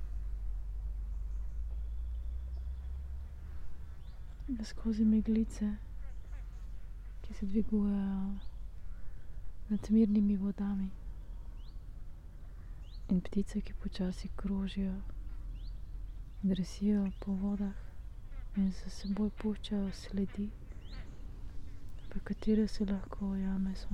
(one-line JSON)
{"title": "walking poems, Slovenia - walking poems", "date": "2012-09-02 18:24:00", "description": "late summer ambience while walking the poem", "latitude": "46.43", "longitude": "15.66", "altitude": "264", "timezone": "Europe/Ljubljana"}